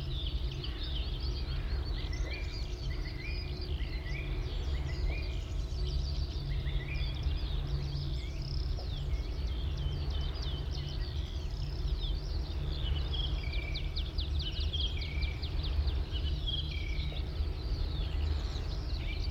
The generator sounds almost still there is so little wind. It's hum is quiet and gives space for the lovely bird song. The song thrush at this spot is a virtuoso and the woodlark so melodic.
Brandenburg, Deutschland